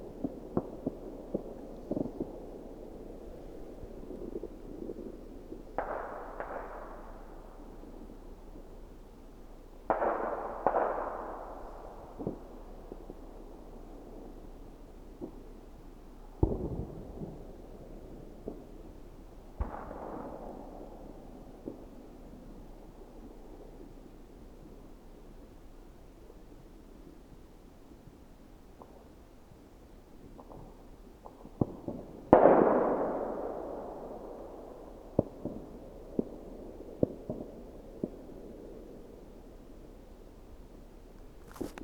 path of seasons, late december meadow, piramida - snow, moonlight
31 December, 21:25